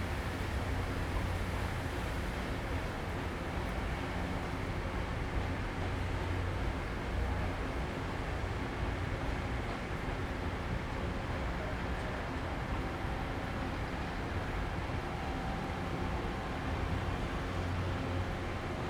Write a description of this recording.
Near the train station, The train passes by, Zoom H2n MS+ XY